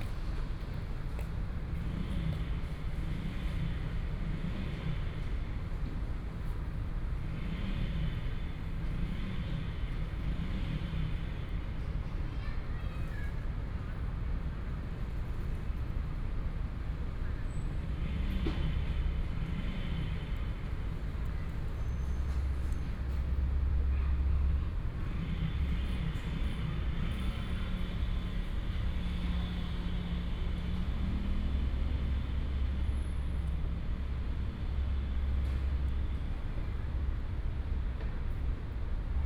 {"title": "YongSheng Park, Taipei City - in the Park", "date": "2014-02-28 18:57:00", "description": "Night in the park, Children, Traffic Sound, Environmental sounds\nPlease turn up the volume a little\nBinaural recordings, Sony PCM D100 + Soundman OKM II", "latitude": "25.06", "longitude": "121.52", "timezone": "Asia/Taipei"}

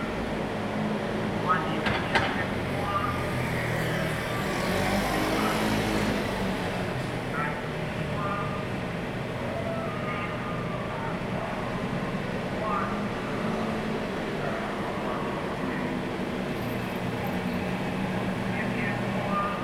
{"title": "大仁街, Tamsui District, New Taipei City - Vendors Publicity", "date": "2016-03-04 10:16:00", "description": "Old street, Traffic Sound, Vendors Publicity\nZoom H2n MS+XY", "latitude": "25.18", "longitude": "121.44", "altitude": "45", "timezone": "Asia/Taipei"}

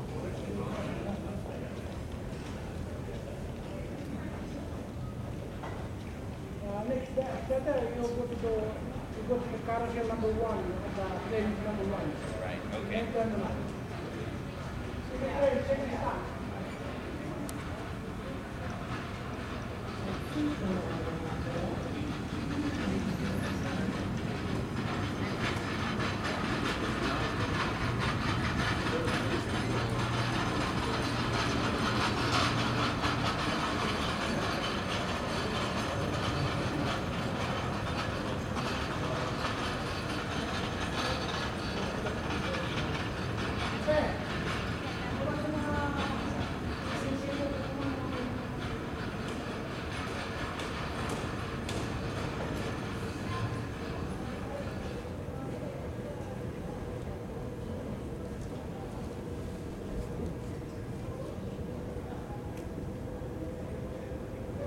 {"title": "South Satellite, SeaTac Airport - SeaTac #1", "date": "1998-12-19 10:10:00", "description": "Seattle-Tacoma International Airport, downstairs at the entrance to the South Satellite shuttle subway. I never liked the way the Muzak keeps seeping in.", "latitude": "47.44", "longitude": "-122.30", "altitude": "116", "timezone": "America/Los_Angeles"}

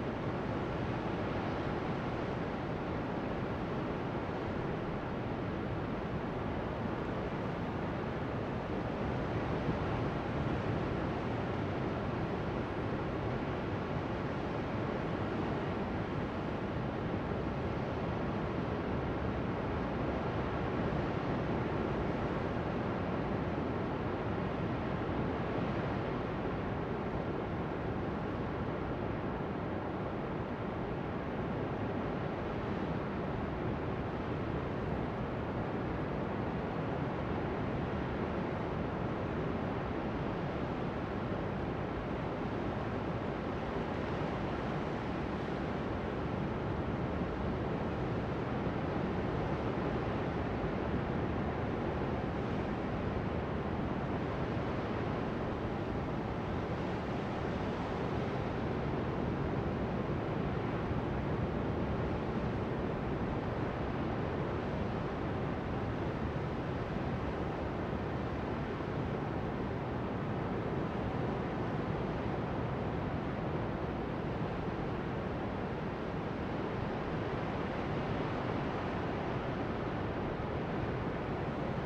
{"title": "Valdivia, Chili - LCQA AMB PUNTA CURIÑANCO MIRADOR LARGE OCEAN ROAR BIG WAVES ROCKS BIRDS MS MKH MATRICED", "date": "2022-08-24 13:30:00", "description": "This is a recording from a mirador in the Área costera protegida Punta Curiñanco on a top cliff. Microphones are pointed towards the ocean. I used Sennheiser MS microphones (MKH8050 MKH30) and a Sound Devices 633.", "latitude": "-39.72", "longitude": "-73.41", "altitude": "67", "timezone": "America/Santiago"}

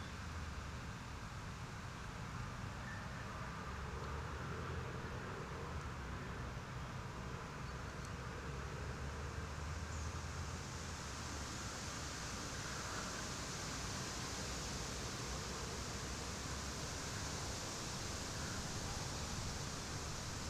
{"title": "Utena, Lithuania, warning sirens", "date": "2019-10-01 10:15:00", "description": "The test of the public warning and information system.", "latitude": "55.51", "longitude": "25.61", "altitude": "106", "timezone": "Europe/Vilnius"}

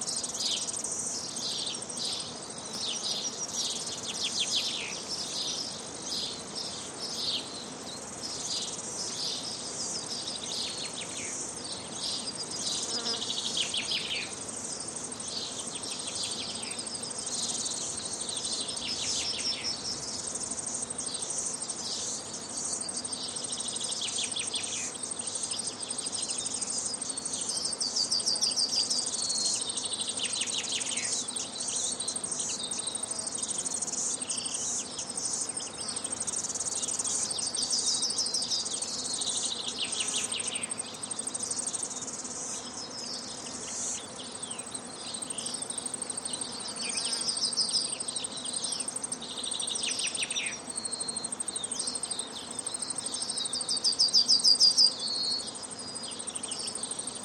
{"title": "Torohape Road (east), New Zealand - Fernbirds", "date": "2008-09-07 08:47:00", "latitude": "-37.32", "longitude": "175.46", "altitude": "11", "timezone": "Pacific/Auckland"}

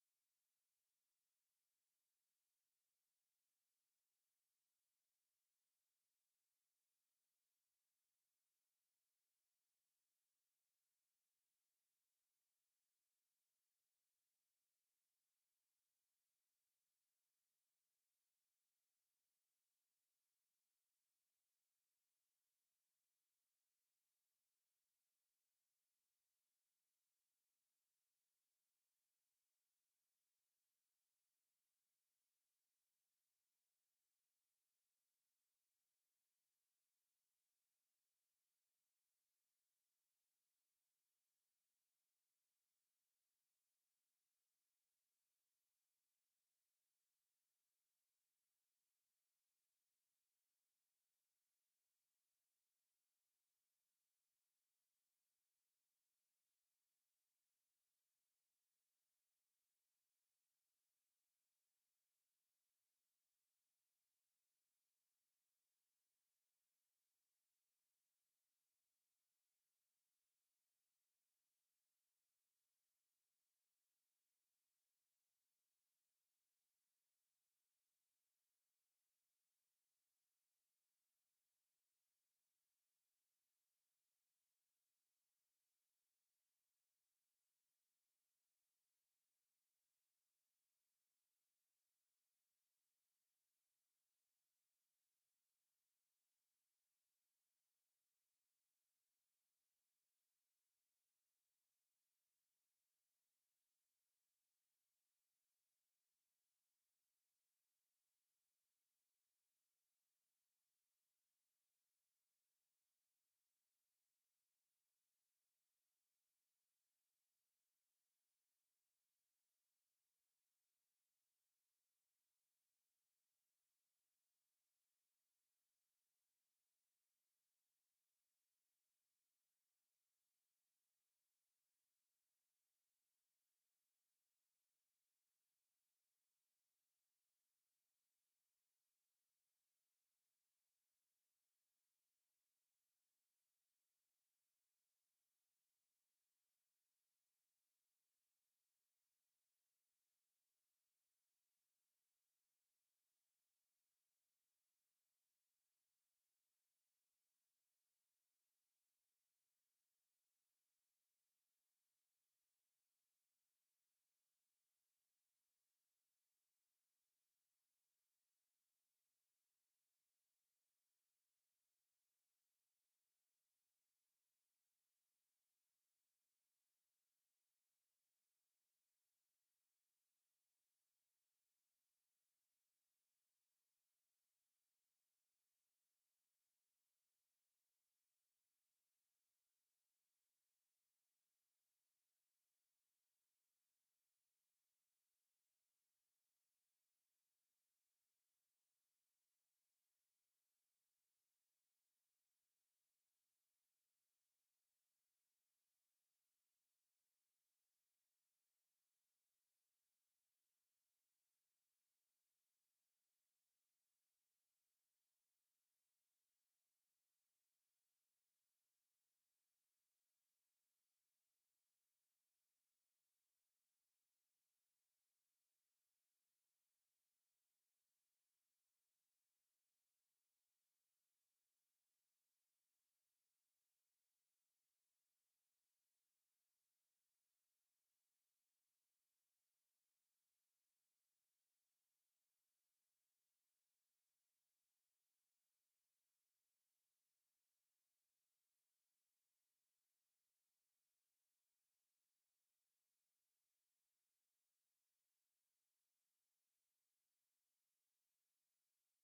{"title": "amsterdam, buiksloterweg: park - the city, the country & me: public viewing of a football match", "date": "2014-06-18 19:40:00", "description": "public viewing during fifa world cup: australia-netherlands 2:3\nthe city, the country & me: june 18, 2014", "latitude": "52.38", "longitude": "4.90", "altitude": "5", "timezone": "Europe/Berlin"}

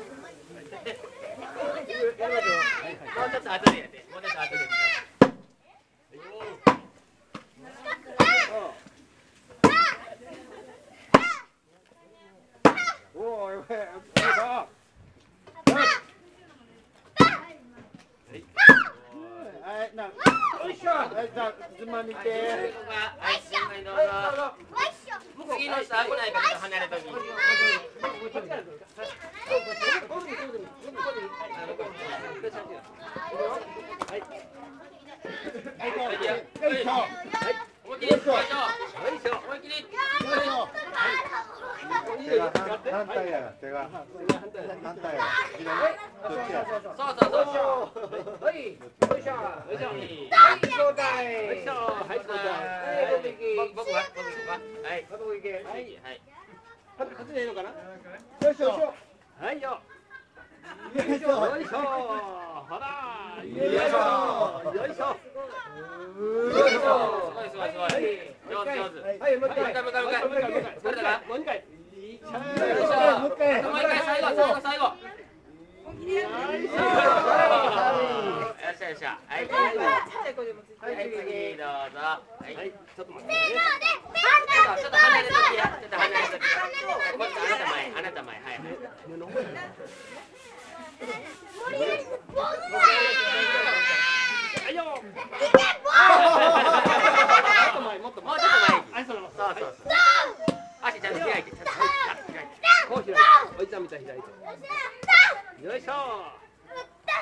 {"title": "Takano, Ritto City, Shiga Prefecture, Japan - Hayama Danchi Mochitsuki", "date": "2013-12-22 11:33:00", "description": "Neighborhood adults and children are making mochi (Japanese rice cake), taking turns pounding the rice. Adults help and encourage children to keep this winter tradition alive in rural Japan.", "latitude": "35.03", "longitude": "136.02", "altitude": "110", "timezone": "Asia/Tokyo"}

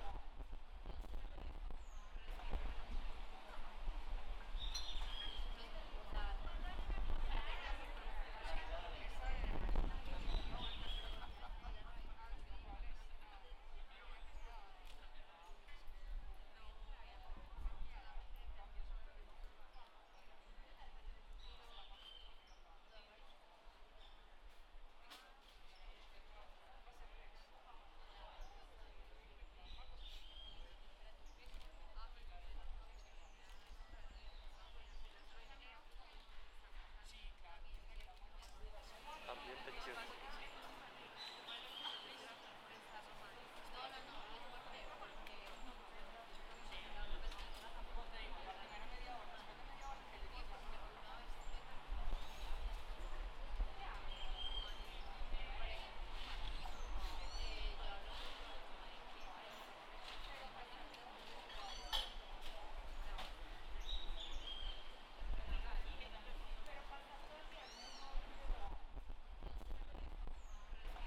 {"title": "Cra., Medellín, Antioquia, Colombia - Kiosko, Universidad de Medellín", "date": "2021-09-23 13:05:00", "description": "Descripción\nSonido tónico: Kiosko de comunicación\nSeñal sonora: Personas en hora de almuerzo\nGrabado por Santiago Londoño y Felipe San Martín", "latitude": "6.23", "longitude": "-75.61", "altitude": "1576", "timezone": "America/Bogota"}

